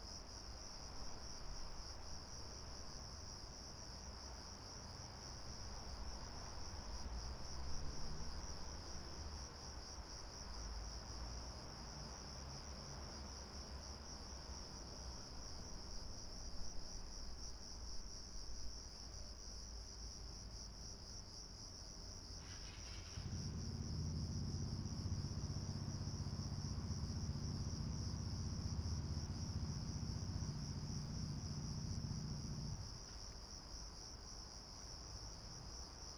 rijeka, railstation, crickets, traffic, motorcycle, train